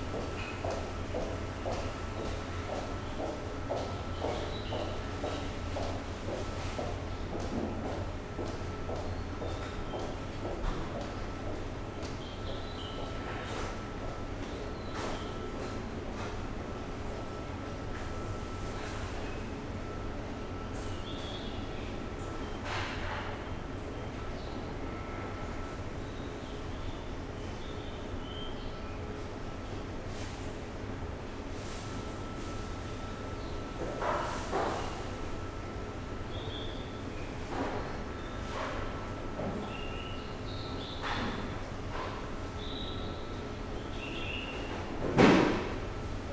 古込 Narita, Chiba Prefecture, Japonia - food court
ambience of the food court at the Narita airport early in the morning. Restaurants and observation desk were still closed. You can hear rumbling coming from a few kitchens as cooks already arrived and do some preparations. (roland r-07)